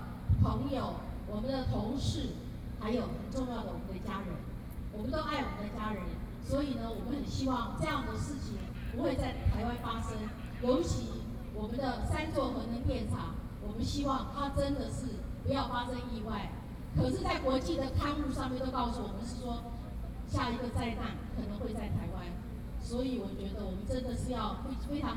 Zhongzheng, Taipei City, Taiwan - Speech
Antinuclear, Next to the protesters in the Legislative Yuan, Zoom H4n+ Soundman OKM II
台北市 (Taipei City), 中華民國